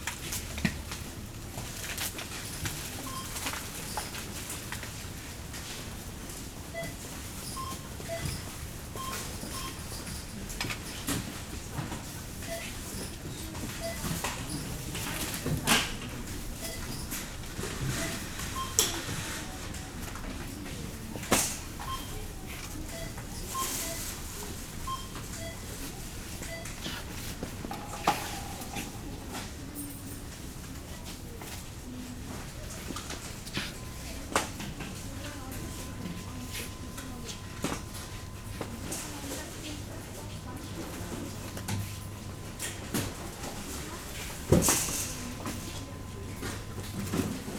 inside the new supermarket, people looking for special offers
the city, the country & me: january 17, 2014